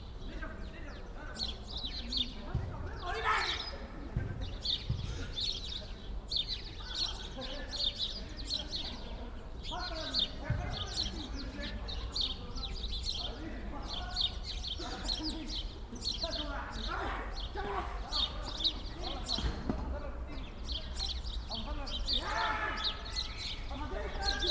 playground, Maybachufer, Berlin, Deutschland - playground ambience
cold SUnday early evening, playground Schinkestr./Maybachufer, normally you'd expect a few parents with kids here, but it's cold and there's corona virus spreading. A few youngsters playing soccer, very rough and obviously contrary to the rules of conduct demanded by the government.
(Sony PCM D50, DPA4060)
22 March